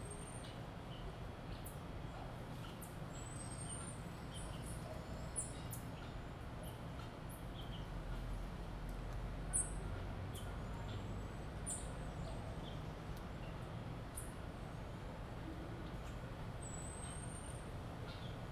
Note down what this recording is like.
The most beautiful urban garden. Mango, Passion Fruit, Coconut and Papaya Trees. Dogs, Cats, Tortoises, Turkeys and Chickens, and of course the ambience of Brazil.